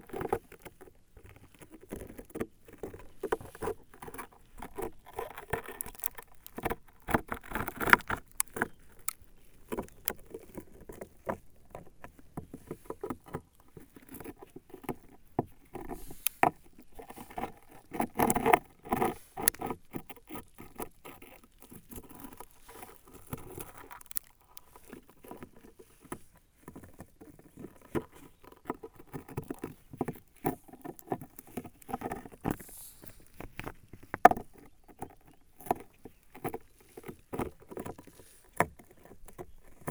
Fraissinet-de-Lozère, France - Granite stones
The Lozere mounts. This desertic area is made of granite stones. It's completely different from surroundings. Here, I'm playing with the stones, in aim to show what is different with it. As I want to show it screechs a lot, I hardly scrub stones.
Mas-d'Orcières, France